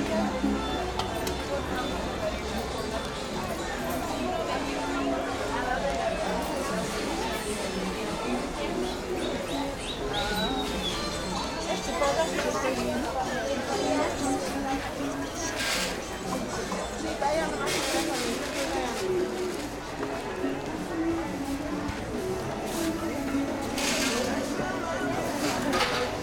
de Mayo, Cochabamba, Bolivia - Mercado 25 de Mayo, Cochabamba
A 4 channel recording mixed down to stereo, front mic was an Audio-Technica 4029 mid side mic, rear mics were 2x Rode Lavaliers, recorded onto a SD 664.
20 April 2017